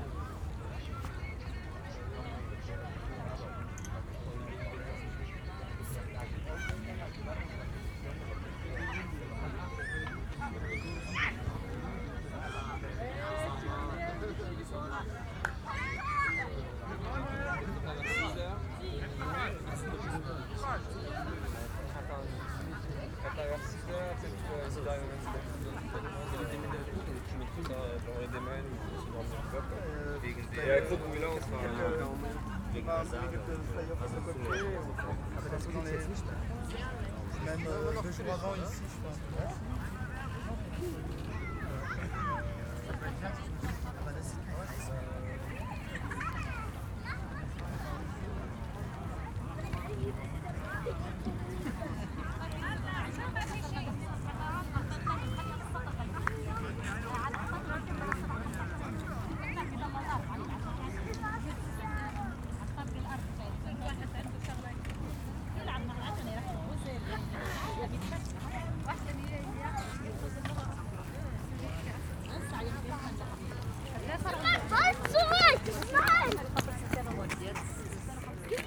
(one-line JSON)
{"title": "Tempelhofer Feld, Berlin - urban gardening project", "date": "2012-07-08 20:25:00", "description": "walk through the self organized urban gardening project at former Tempelhof airport, on a beautiful summer sunday evening.\n(SD702, DPA4060)", "latitude": "52.47", "longitude": "13.42", "altitude": "48", "timezone": "Europe/Berlin"}